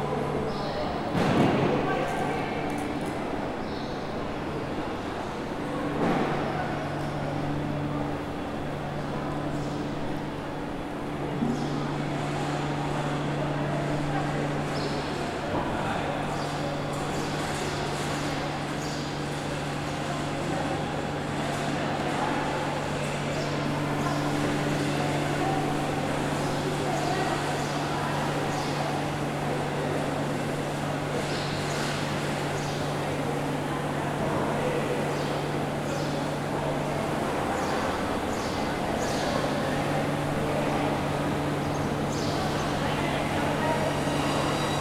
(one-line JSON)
{"title": "Mercado da Ribeira, São Paulo, Lisbon, Portugal - Mercado Municipal da Ribeira", "date": "2012-05-29 12:13:00", "description": "Inside the Ribeira Market, people, space resonance, vegetables and food chopping", "latitude": "38.71", "longitude": "-9.15", "altitude": "7", "timezone": "Europe/Lisbon"}